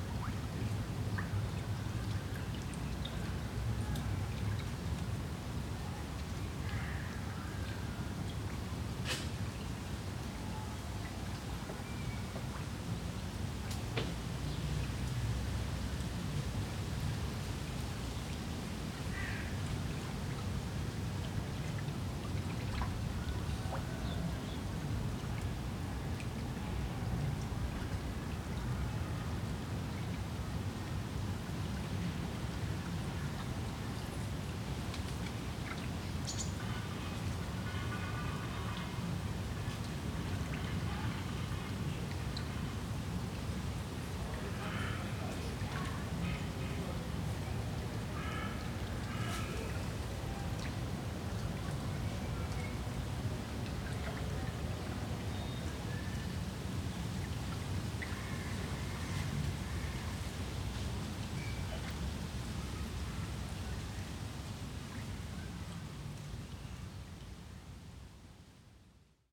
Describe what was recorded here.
Panke, Berlin - at Panke waterside (small stream), children, crows. [I used the Hi-MD-recorder Sony MZ-NH900 with external microphone Beyerdynamic MCE 82]